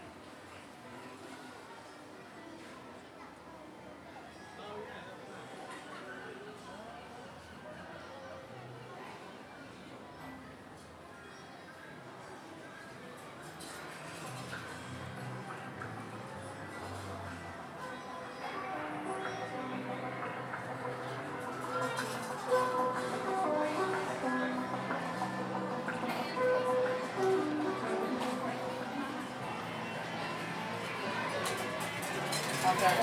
S W Coast Path, Swanage, UK - Swanage Seafront Soundwalk
A short soundwalk from an amusement arcade north upwards along the promenade, past rows of beach huts and ending at the small pier at the location marked on the map. (Tascam DR-05 with windshield)
23 August 2017, 18:00